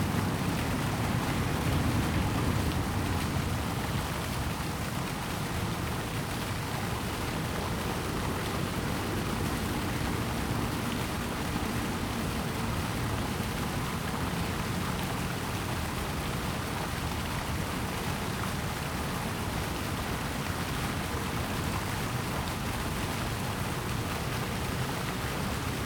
Waterways, fountain, Construction sound, Traffic sound
Zoom H2n MS+XY
Hsinchu City, Taiwan